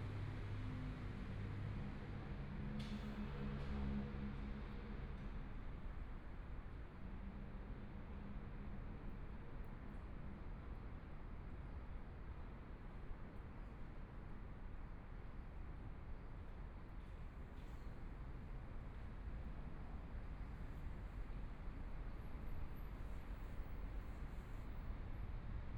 JinBei Park, Taipei City - in the Park
Sitting in the park, Cloudy day, Pigeons Sound, Traffic Sound, Binaural recordings, Zoom H4n+ Soundman OKM II
Zhongshan District, Taipei City, Taiwan